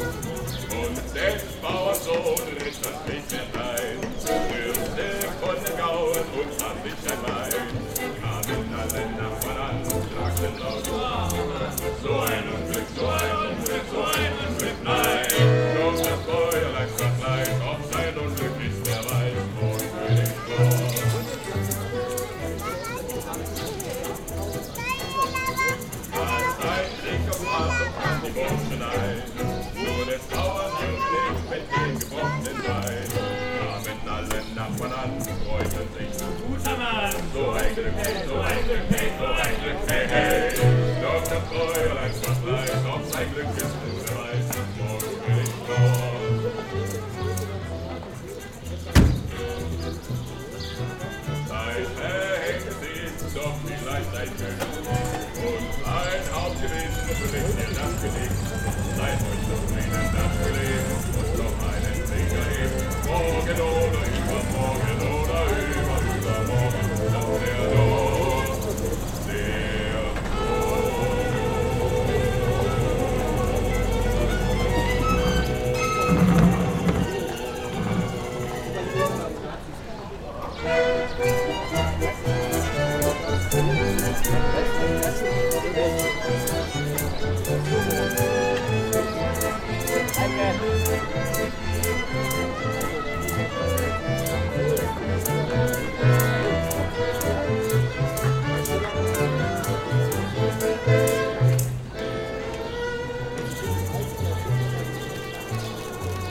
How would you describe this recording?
street music, city tour lecture, horse carriage, people (zoom h6)